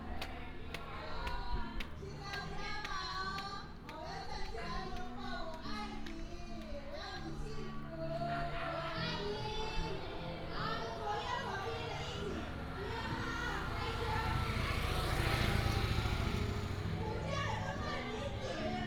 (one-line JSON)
{"title": "Zhengxing, Jinfeng Township 金峰鄉 - In tribal streets", "date": "2018-04-05 16:20:00", "description": "In tribal streets, Paiwan people", "latitude": "22.60", "longitude": "121.00", "altitude": "44", "timezone": "Asia/Taipei"}